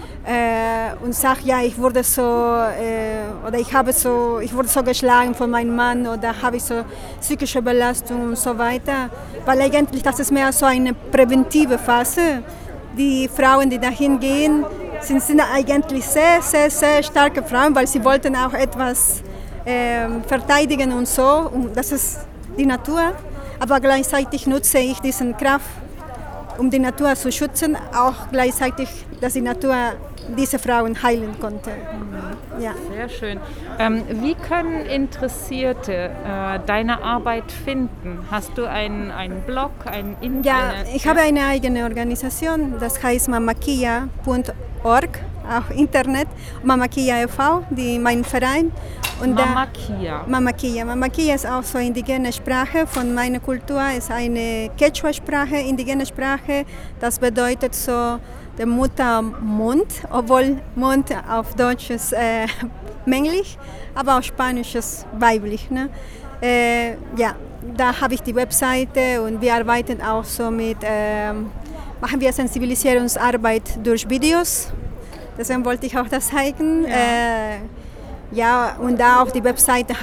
“Violence against women” locally and in other countries, this was the alarm raising topic of the evening. A fire alarm went off and cut the already pandemic-style brief event further but, luckily, the mic was at hand for a spontaneous live interview with the last presenter in front of the building, while the fire brigade marched in…